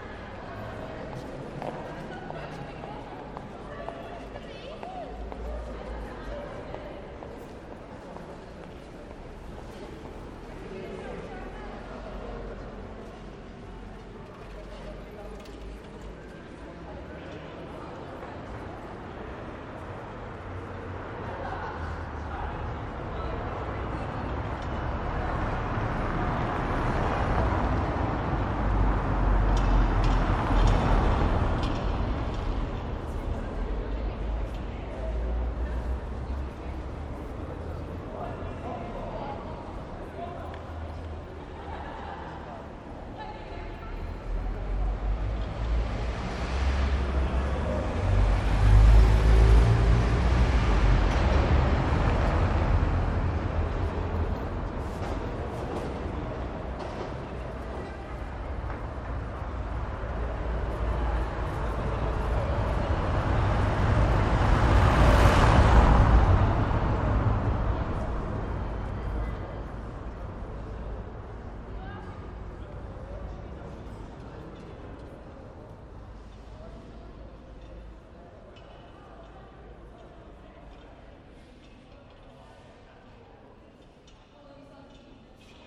Ieper, België - last post
each day, since 1928 at 20.00 last post is played at the Meenenpoort in Ieper
this recordign is made on an ondinary day
it is very remarkable how fast cars start driving trough the gate again after the last post was played for that day
rememenbrence is for different worlds
Ieper, Belgium, 17 September 2003